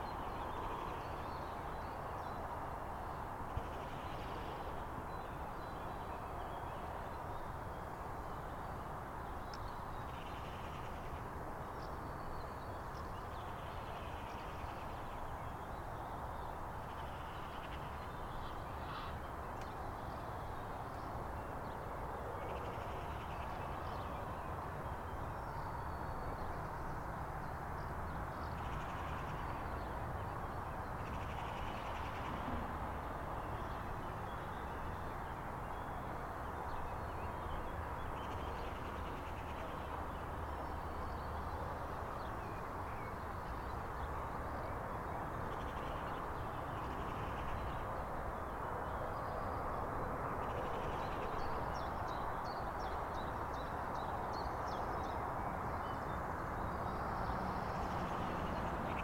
In den Klostergärten, Limburg an der Lahn, Deutschland - Fernes Rauschen A3 und ICE
In den Klostergärten, Sonntag. Im Hintegrund rauscht die A3 und donnert der ICE.